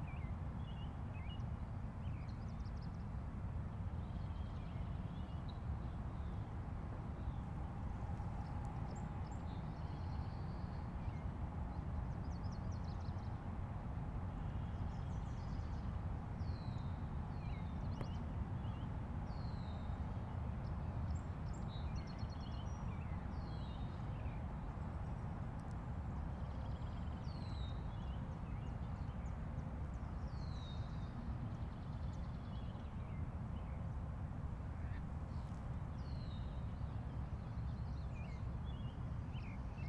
Washington Park, South Doctor Martin Luther King Junior Drive, Chicago, IL, USA - Summer Walk 2
Recorded with Zoom H2. Recording of interactive soundwalk.
Illinois, United States of America, 18 June 2011, 14:15